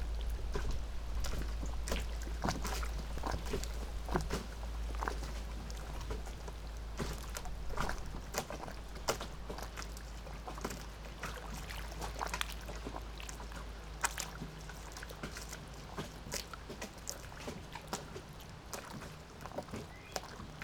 Strzeszynskie Lake, Poznan suburbia - boats in the wind
small, fiberglass boats thumping in the wind at makeshift piers. (sony d50)